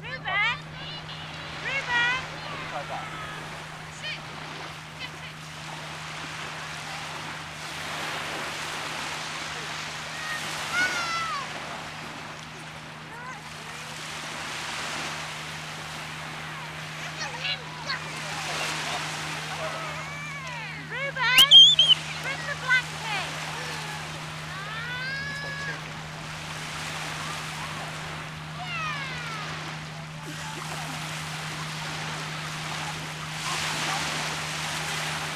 Sandbanks Beach, Dorset - Local lads & screaming Mum
Recorded on a Fostex FR-2LE Field Memory Recorder using a Audio Technica AT815ST and Rycote Softie.
UK